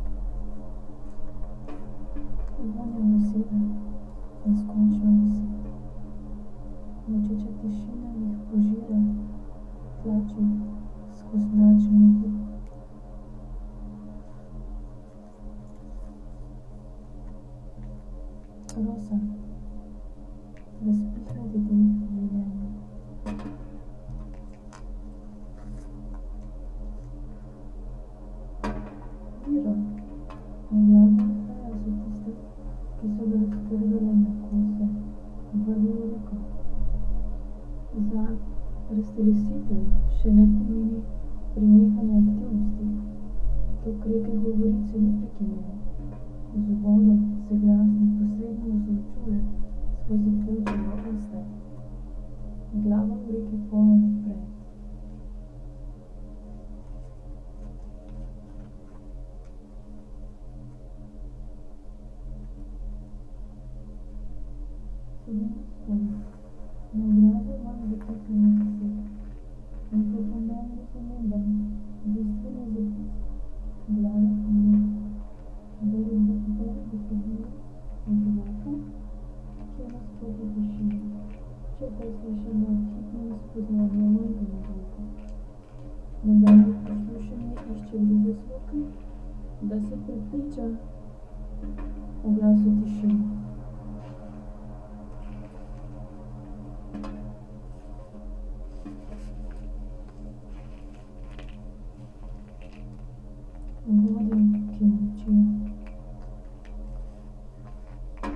quarry, Marušići, Croatia - void voices - stony chambers of exploitation - borehole
winter, slow walk around while reading from strips of paper